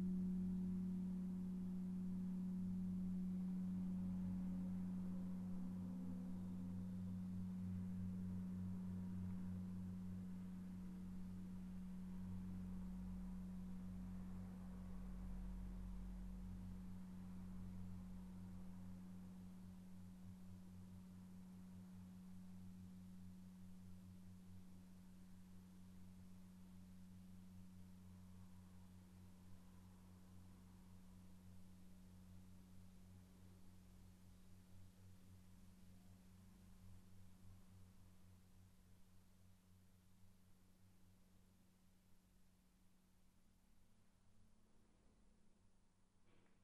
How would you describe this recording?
Inside the bell tower of the local church. The sound of the 4pm bells. Heinerscheid, Kirche, Glocken, Im Glockenturm der Ortskirche. Das Läuten der 4-Uhr-Glocken. Heinerscheid, église, cloches, A l’intérieur du clocher de l’église du village. Le son du carillon de 16h00. Project - Klangraum Our - topographic field recordings, sound objects and social ambiences